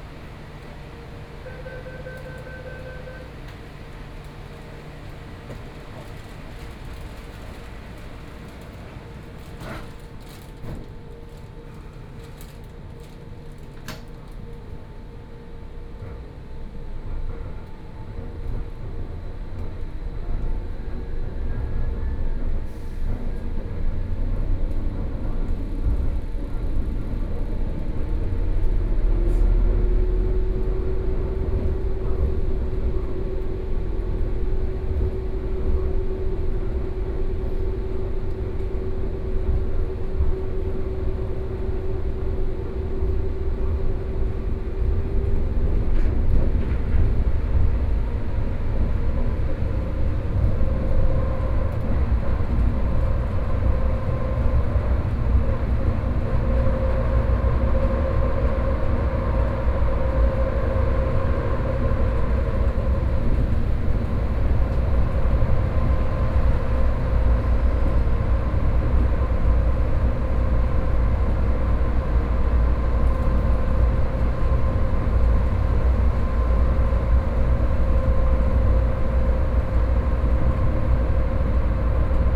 Daan District, Taipei City - Wenshan Line (Taipei Metro)
from Liuzhangli Station to Muzha Station, Sony PCM D50 + Soundman OKM II